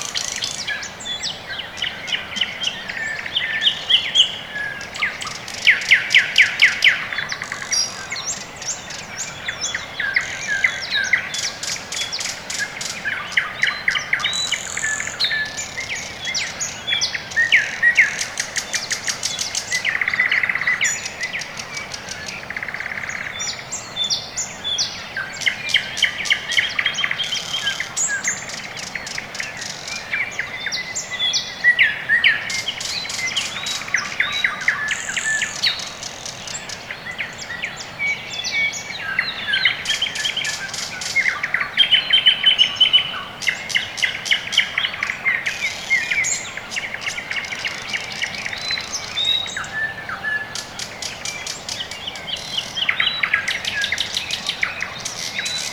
район Орехово-Борисово Северное, Москва, Россия - Morning birds
Quiet early morning.
Tech: Sony ECM-MS2 -> Marantz PMD-661.
Processing: iZotope RXII (Eq, Gain).